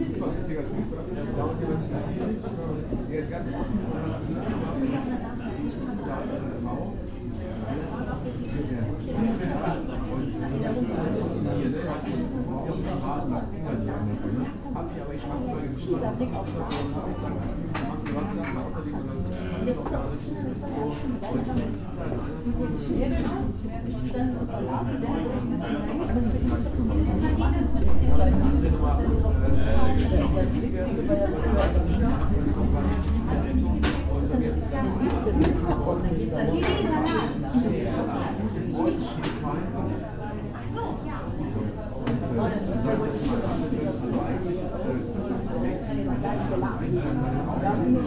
Oststadt, Hannover, Deutschland - reimanns eck
reimanns eck, lister meile 26, 30161 hannover